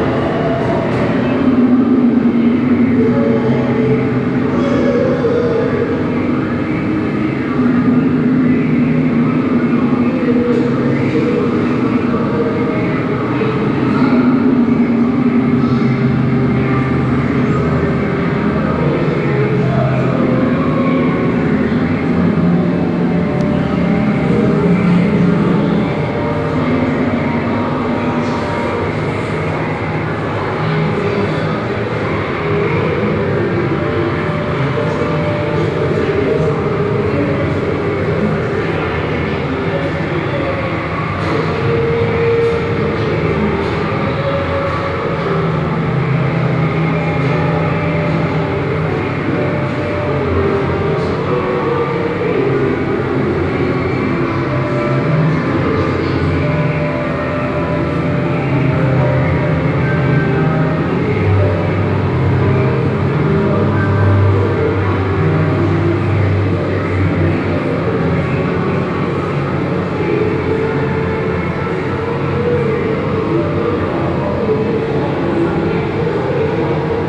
Oberkassel, Düsseldorf, Deutschland - Düsseldorf, Stoschek Collection, First Floor
Inside the Stoschek Collection on the first floor during the exhibition number six - flaming creatures. The sound of an media installation by John Bock in the wide fabric hall ambience.
This recording is part of the exhibition project - sonic states
soundmap nrw - social ambiences, sonic states and topographic field recordings